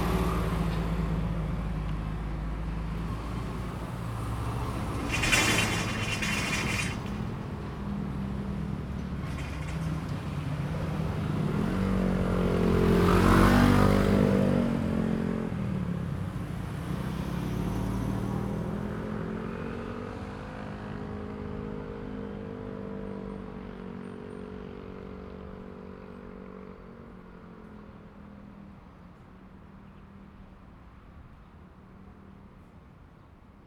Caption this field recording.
Traffic sound, The train runs through, Next to the tracks, in the railroad crossing, Bird sound, Zoom H2n MS+XY +Spatial audio